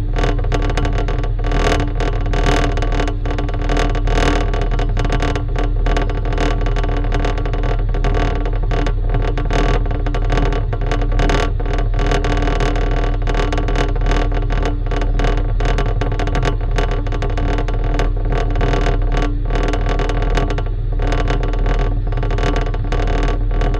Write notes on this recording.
Dual contact microphone recording of a vibrating plate of an air circulation unit near a revolving exit door of a bus station.